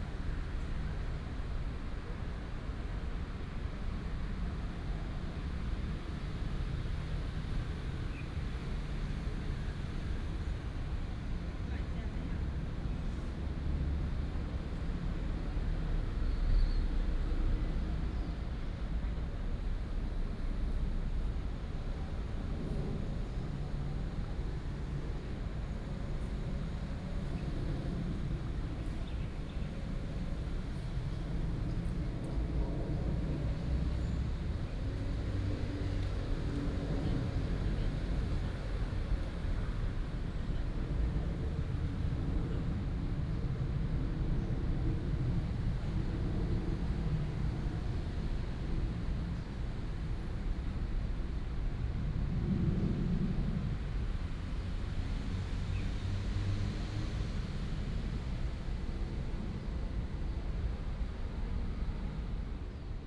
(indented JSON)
{
  "title": "東豐公園, Da'an District, Taipei City - in the Park",
  "date": "2015-06-27 18:22:00",
  "description": "Bird calls, Traffic noise, Very hot weather",
  "latitude": "25.04",
  "longitude": "121.54",
  "altitude": "22",
  "timezone": "Asia/Taipei"
}